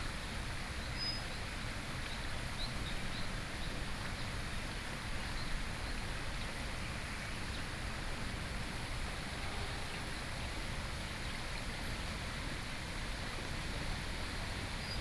寶斗溪, Baodoucuokeng, Linkou Dist. - Stream
Stream, birds
Sony PCM D50